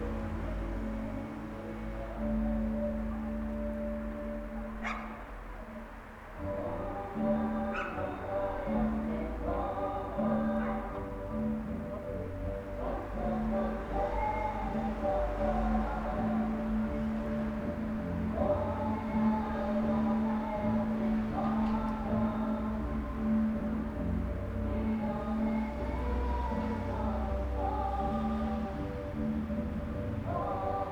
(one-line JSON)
{"title": "Dywity, Polska - Shrovetide in village cultural center", "date": "2013-02-09 18:51:00", "latitude": "53.83", "longitude": "20.47", "altitude": "121", "timezone": "Europe/Warsaw"}